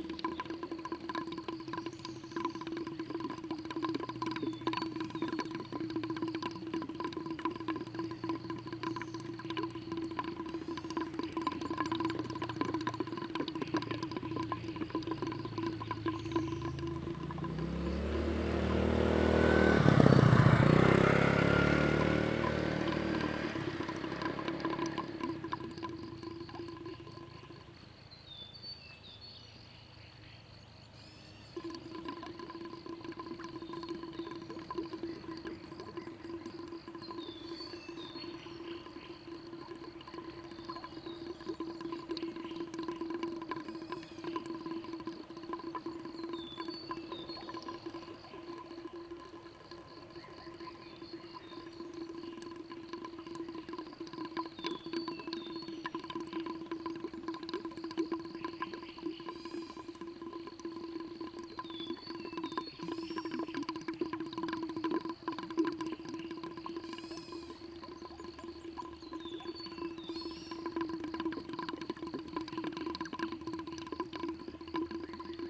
水上巷, 埔里鎮桃米里, Taiwan - Water flow and birds sound
Water flow sound, Bird sounds, Traffic Sound
Zoom H2n MS+XY